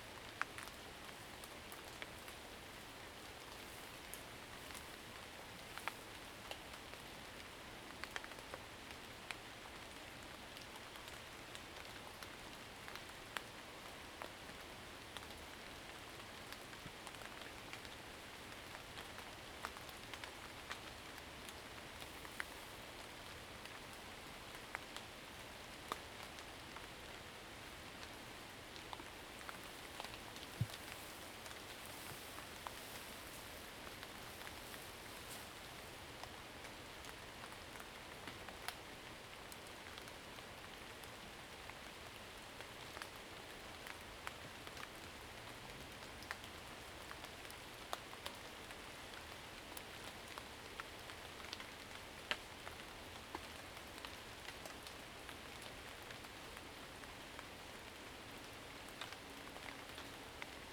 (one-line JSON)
{"title": "水上巷, 桃米里 Puli Township - raindrop", "date": "2016-03-24 09:47:00", "description": "In the woods, raindrop\nZoom H2n MS+XY", "latitude": "23.94", "longitude": "120.92", "altitude": "614", "timezone": "Asia/Taipei"}